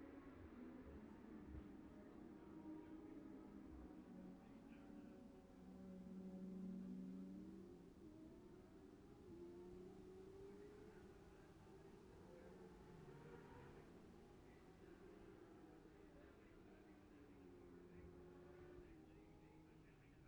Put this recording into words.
Gold Cup 2020 ... 600 odd and 600 evens qualifying ... Memorial Out ... dpa 4060 to Zoom H5 ...